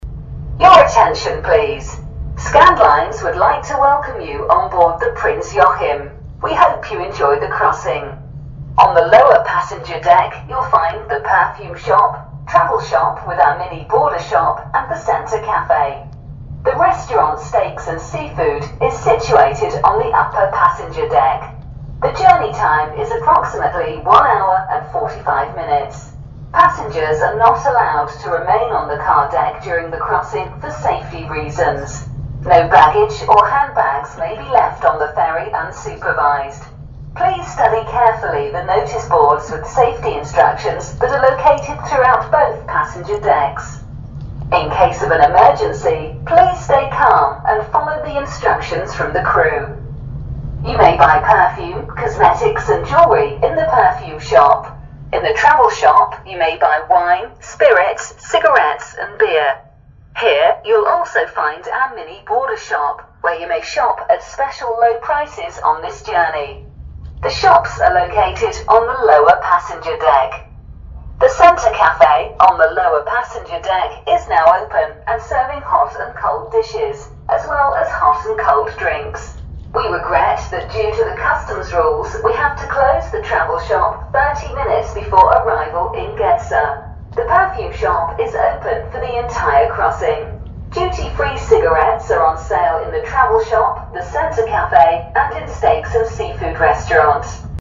Transbaltic Ferry Rostock - Gedser
not only due to its scenic view into the plumb grey sea but also to the lustbringing opportunities onboard, a ferry ride is probably one among the most beautiful things life can offer...